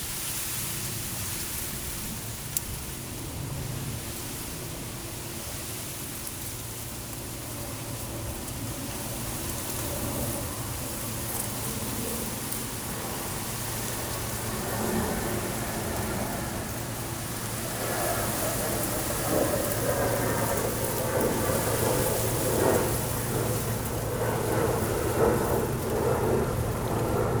Leeuw-Saint-Pierre, Belgique - Wind in the reeds
The wind in the reeds and a small barge arriving in the sluice (Ruisbroeck sluis).
Sint-Pieters-Leeuw, Belgium, 13 August